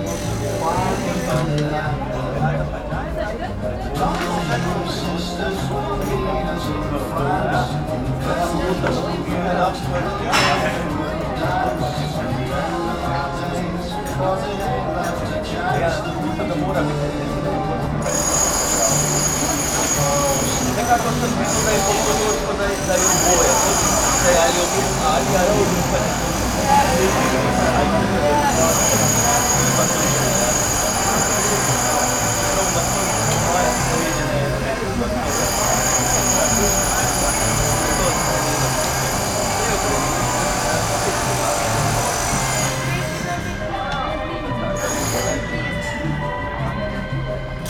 {"title": "cafe Living Room, Koroska street, Maribor - construction works all around", "date": "2015-09-09 13:30:00", "latitude": "46.56", "longitude": "15.64", "altitude": "270", "timezone": "Europe/Ljubljana"}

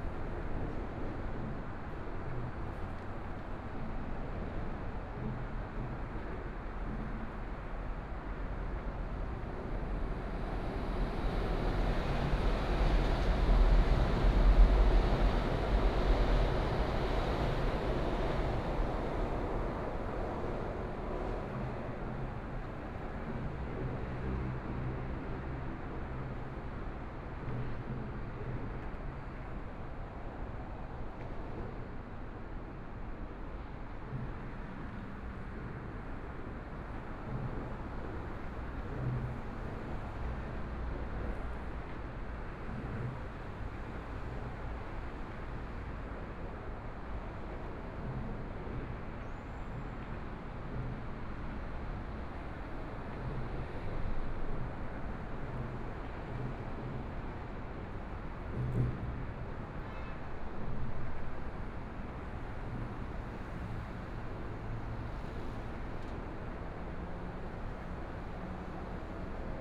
{"title": "大同區重慶里, Taipei City - Standing beneath the freeway lanes", "date": "2014-02-16 16:10:00", "description": "Standing beneath the freeway lanes, Traffic Sound, MRT train noise, Sound from highway, Binaural recordings, Zoom H4n+ Soundman OKM II", "latitude": "25.08", "longitude": "121.52", "timezone": "Asia/Taipei"}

